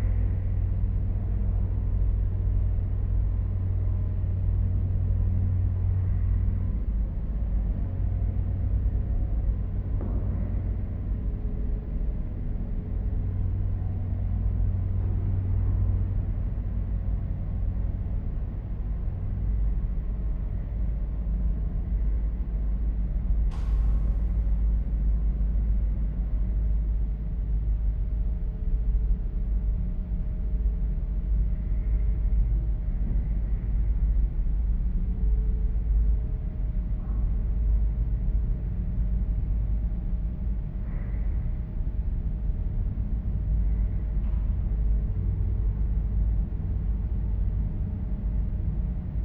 Inside the church. A chair, footsteps, a door - then the ambience of the empty space with some distant accents.
This recording is part of the intermedia sound art exhibition project - sonic states
soundmap nrw -topographic field recordings, social ambiences and art places